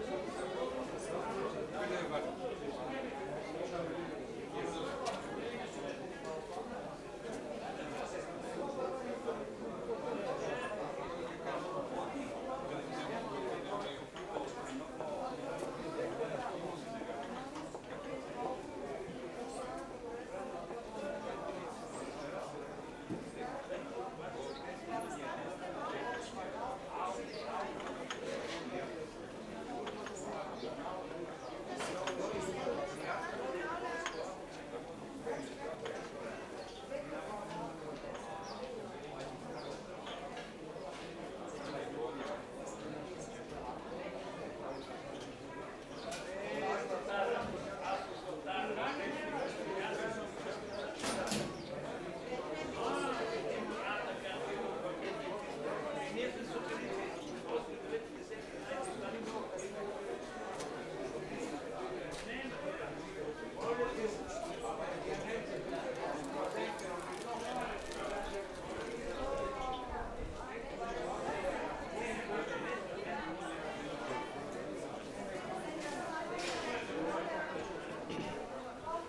Sofia Market Walk
Walk over the Sofia Market, starting in a hall with playing children, stopping once and again for listening to chats by people meeting each other.
Sofia, Bulgaria, 5 April